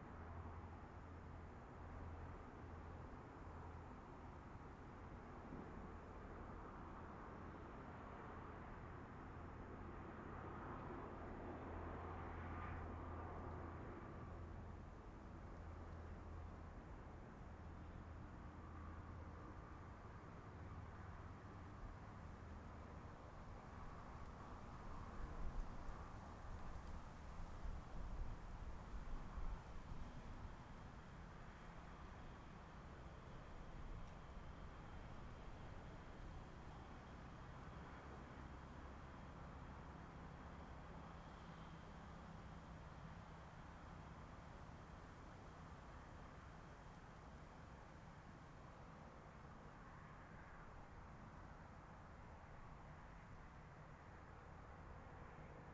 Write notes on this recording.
Fighter flight traveling through, The distant sound of traffic, Zoom H6 M/S